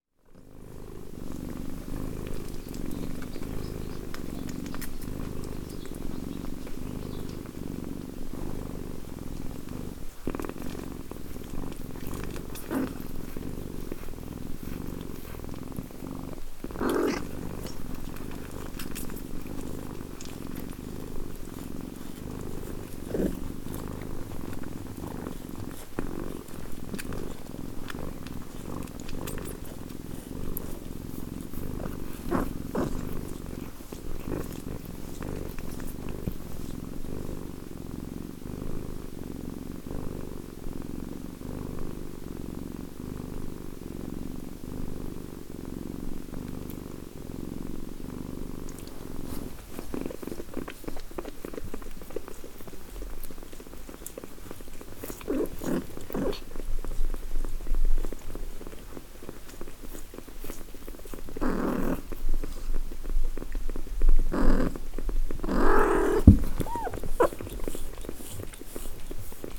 Średniak, Szczawnica, Poland - (180) Kittens attack recorder
Recording of kittens left with a recorder.